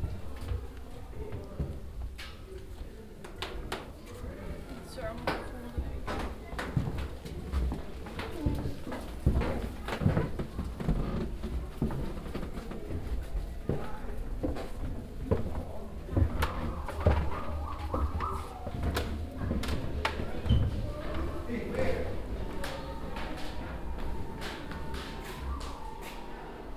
On the ground level of the castle. Inside a roundwalk that shows the historical development of the castle. The sound of the visitors voices and steps on the wooden construction.